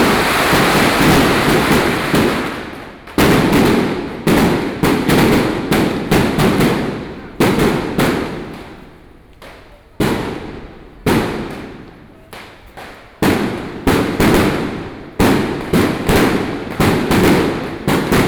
Sec., Hankou St., Taipei City - Traditional temple festivals
Firework, Traditional temple festivals, Traditional musical instruments, Binaural recordings, Sony PCM D50 + Soundman OKM II, ( Sound and Taiwan - Taiwan SoundMap project / SoundMap20121115-11 )
Wanhua District, 漢口街二段96號